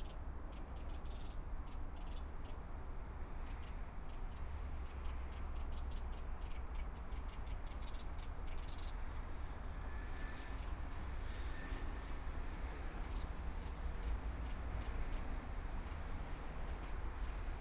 新生公園, Taipei EXPO Park - Sitting in the park
in the Park, Environmental sounds, Birds singing, Traffic Sound, Aircraft flying through, Tourist, Clammy cloudy, Binaural recordings, Zoom H4n+ Soundman OKM II